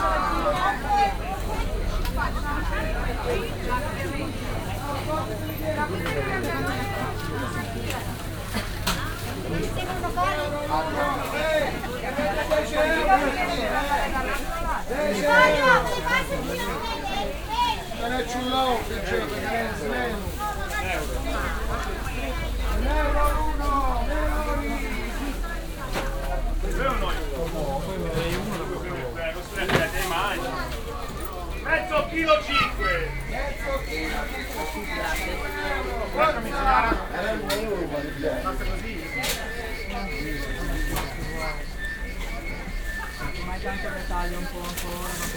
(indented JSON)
{
  "title": "alassio, via giovanni batista, weekly market",
  "date": "2009-07-25 18:20:00",
  "description": "fruits, meats and vegetable sellers on the weekly market\nsoundmap international: social ambiences/ listen to the people in & outdoor topographic field recordings",
  "latitude": "44.01",
  "longitude": "8.16",
  "altitude": "21",
  "timezone": "Europe/Berlin"
}